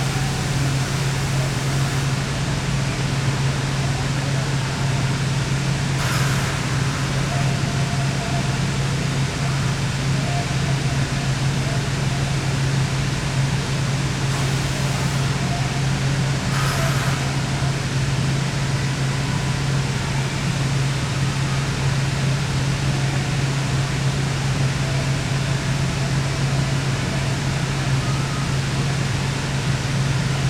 Listening through a high window at the back of the mill. Radio in the background. Walking Holme
Kirklees, UK, 20 April 2011, 11:14